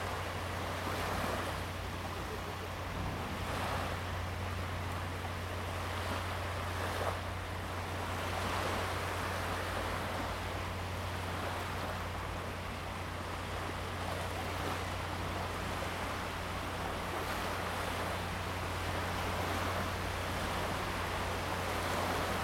Polska - Intrusion
Waves at Stogi beach, disturbed by the constant hum of the nearby cargo terminal
14 October 2013, 12:00